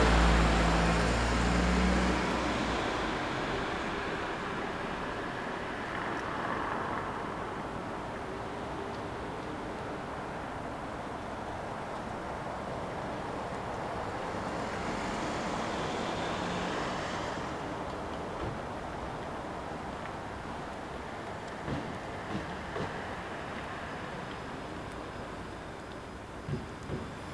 Berlin: Vermessungspunkt Friedelstraße / Maybachufer - Klangvermessung Kreuzkölln ::: 09.11.2012 ::: 01:32